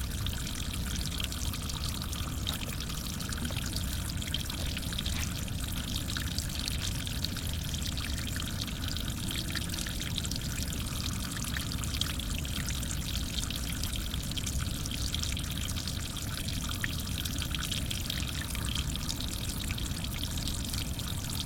Water: Falls of the Ohio - Falls of the Ohio, Kentucky State Park
Small rock overhang pt.2